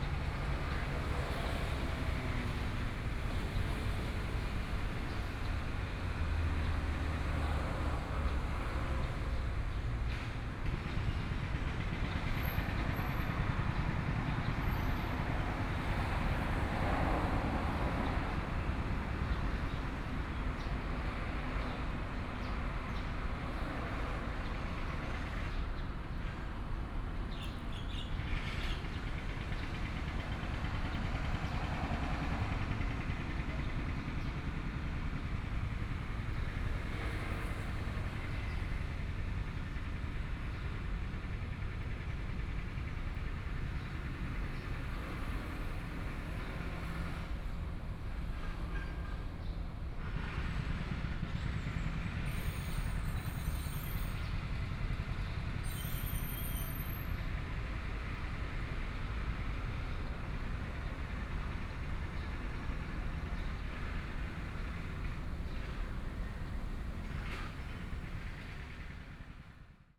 In the small square, Under the tree, Road construction noise, Traffic Sound, Hot weather, Birds
14 May, 11:46